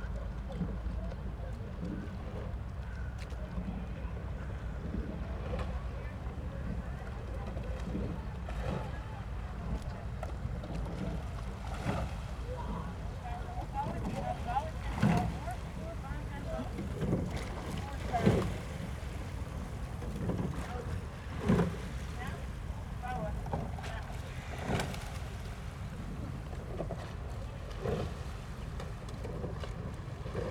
Berlin, Treptower Park, river Spree - rowing regatta training
Treptower Park, at the river Spree, training for a rowing regatta, boats passing-by back and forth, river side ambience
(SD702, DPA4060)
Berlin, Germany, 18 October, ~13:00